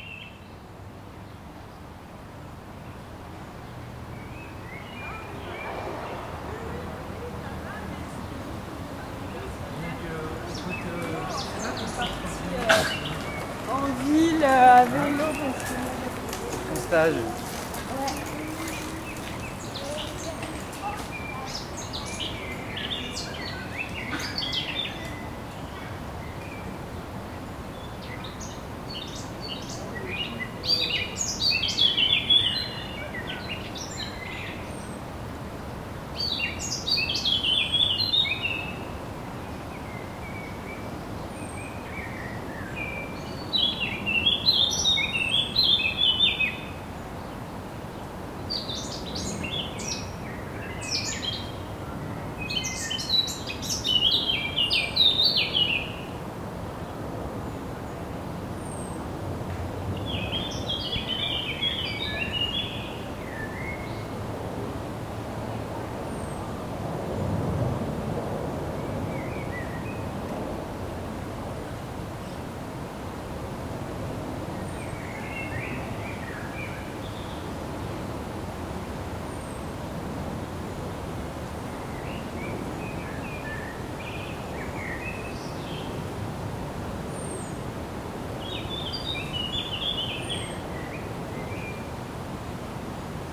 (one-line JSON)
{"title": "Rue des Amidonniers, Toulouse, France - Amidonniers Birds", "date": "2022-05-07 15:03:00", "description": "birds, bicycle, walker\nin the background the sound of the river, road and city\nCaptation : ZOOMH4n", "latitude": "43.61", "longitude": "1.42", "altitude": "136", "timezone": "Europe/Paris"}